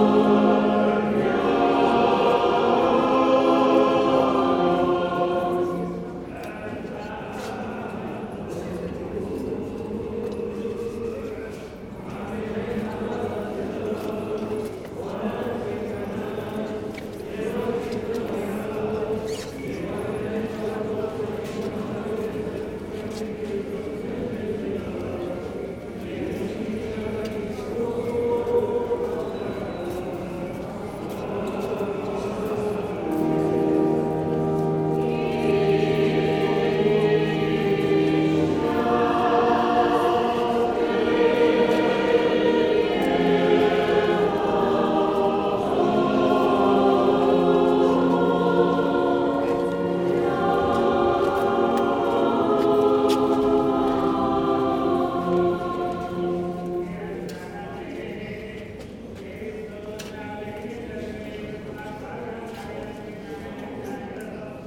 Gyumri, Arménie - Divine Liturgy (Liturgy of the Faithful)
During the 3rd part of the orthodox celebration, the Liturgy of the Faithful. The church is absolutely completely full ! People are moving everywhere, entering, going out, lighting candles, discussing, phoning, singing, pushing me, and praying. The orthodox mass in Armenia is a strong experience !
9 September 2018, ~12:00